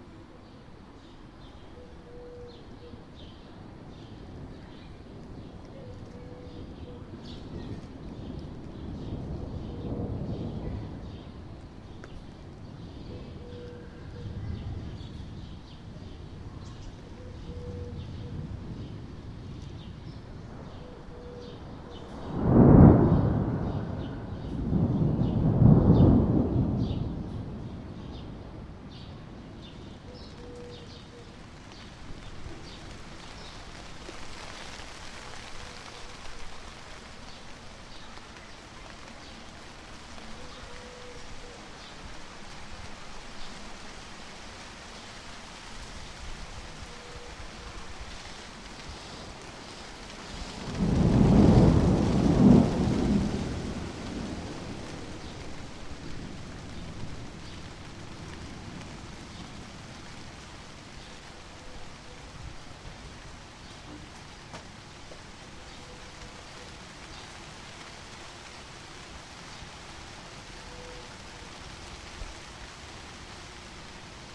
Rain, downtown Bucharest
Rain beginning to pour in the yard behind the apartment buildings
Bucharest, Romania, 14 June 2011, ~16:00